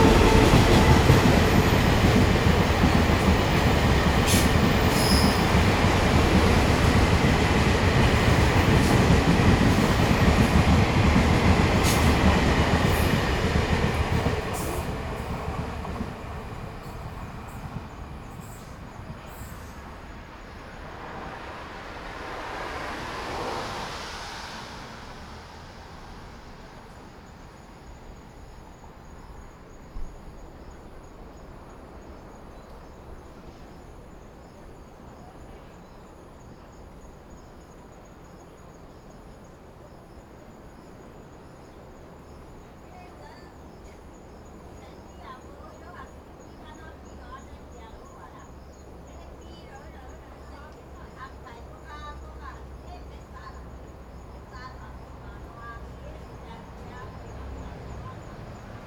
頭城鎮石城里, Yilan County - Train traveling through
Train traveling through, Beside the railway track, Very hot weather, Traffic Sound
Zoom H6+ Rode NT4